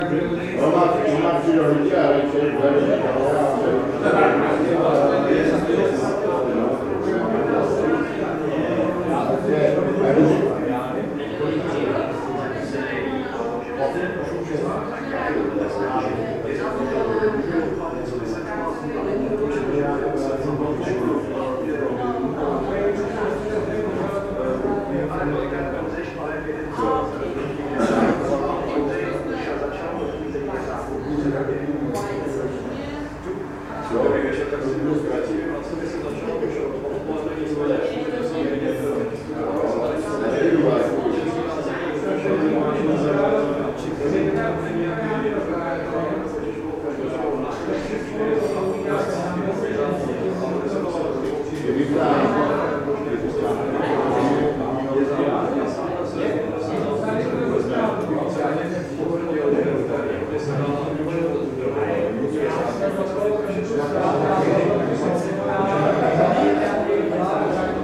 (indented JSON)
{
  "title": "Český Krumlov, Tschechische Republik - Restaurace U Zelené Ratolesti",
  "date": "2012-08-07 20:15:00",
  "description": "Český Krumlov, Tschechische Republik, Restaurace U Zelené Ratolesti, Plešivec 245, 38101 Český Krumlov",
  "latitude": "48.80",
  "longitude": "14.31",
  "altitude": "489",
  "timezone": "Europe/Prague"
}